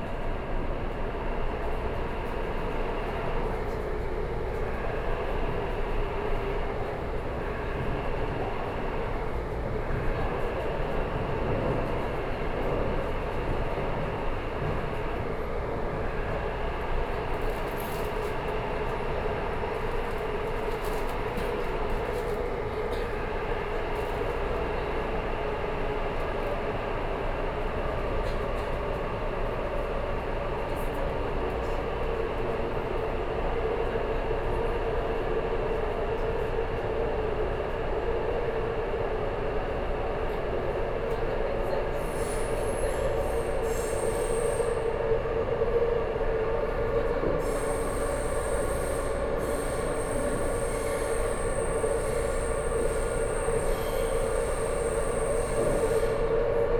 Crossing the line noise sound great, from Zhongxiao Xinsheng Station to Guting Station, Sony PCM D50 + Soundman OKM II